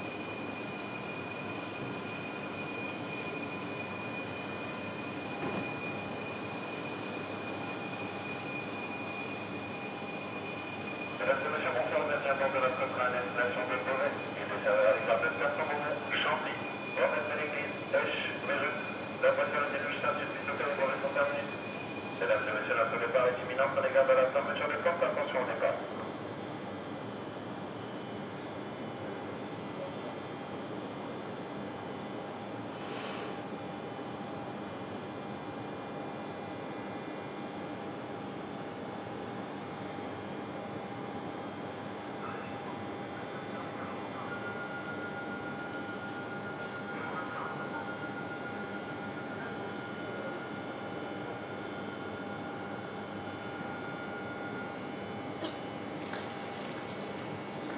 Gare du Nord, Paris, France - Gare du Nord - ambiance - départ d'un TER
Gare du Nord
départ d'un train TER en direction de Beauvais
ZOOM F3 + AudioTechnica BP 4025
France métropolitaine, France, 3 October